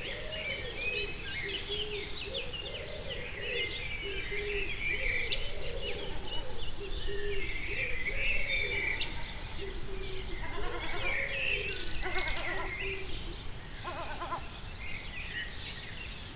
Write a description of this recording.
Près du Sierroz le chant matinal des merles, passage de goelands, puis pigeons ramiers.